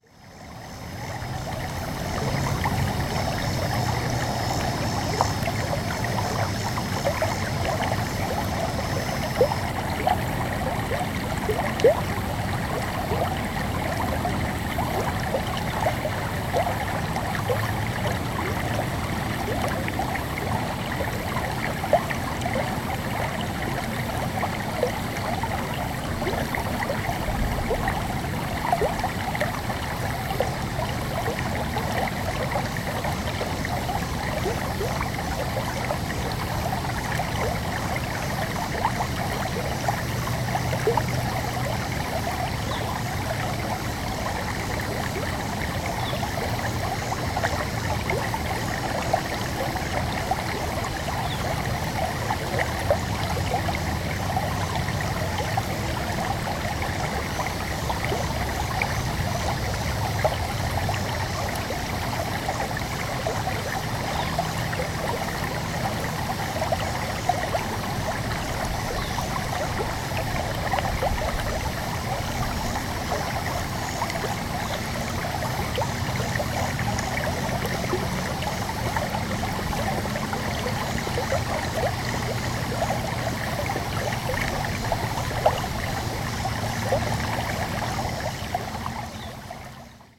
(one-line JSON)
{"title": "Meramec River Gravel Bar, Meramec Township, Missouri, USA - Riffle", "date": "2020-09-26 13:55:00", "description": "Sounds of a riffle from a gravel bar in the Meramec River.", "latitude": "38.53", "longitude": "-90.57", "altitude": "127", "timezone": "America/Chicago"}